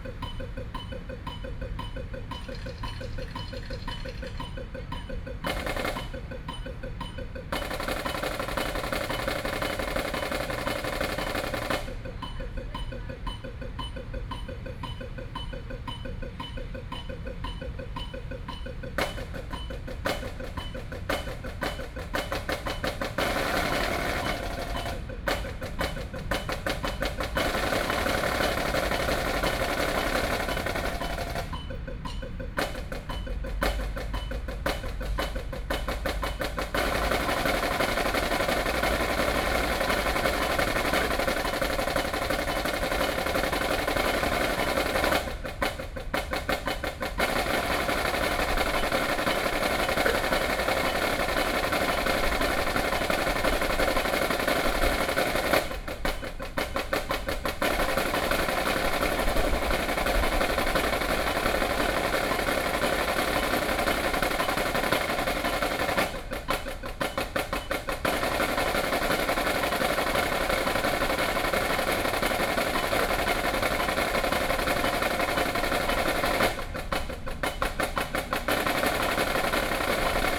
National Chiang Kai-shek Memorial Hall, Taipei - percussion instrument
Students are practicing percussion instrument, Sony PCM D50 + Soundman OKM II